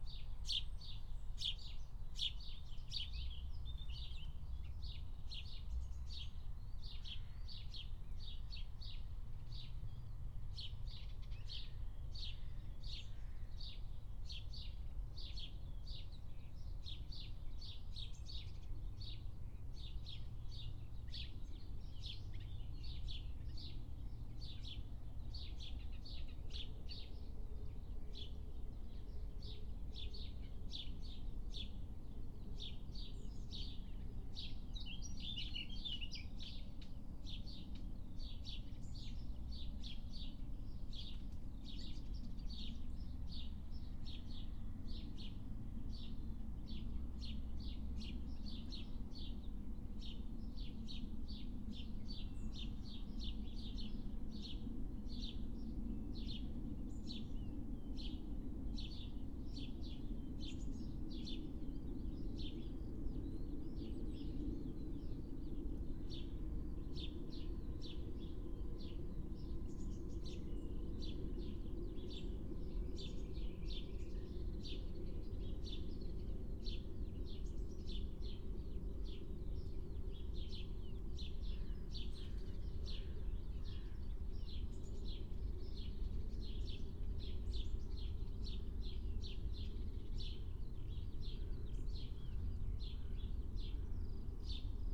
05:00 Berlin, Tempelhofer Feld
Deutschland, 2 June 2020, 05:00